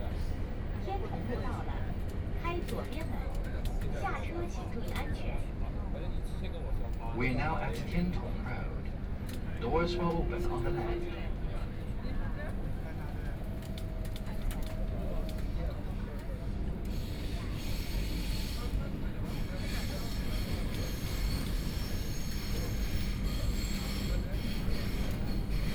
Huangpu District, Shanghai - Line 10 (Shanghai Metro)
from Laoximen station to North Sichuan Road station, erhu, Binaural recording, Zoom H6+ Soundman OKM II